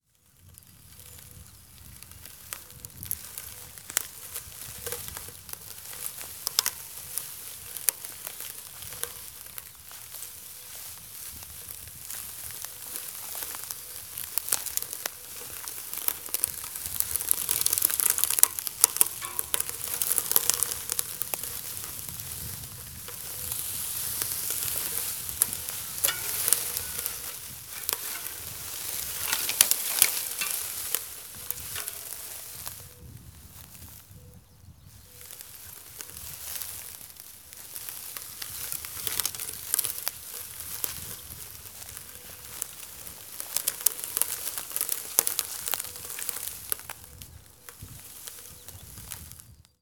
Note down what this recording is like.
hauling my bike over a patch of dried grass, branches and stalks